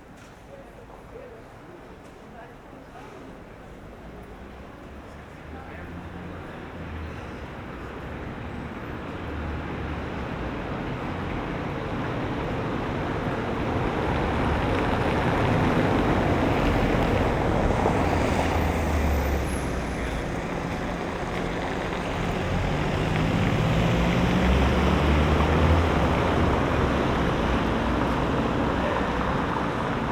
Berlin: Vermessungspunkt Friedel- / Pflügerstraße - Klangvermessung Kreuzkölln ::: 07.07.2012 ::: 01:18
7 July 2012, 01:18